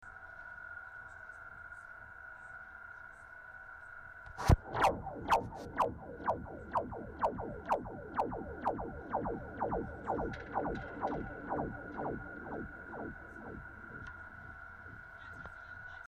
Mega Bridge, Bangkok cable pows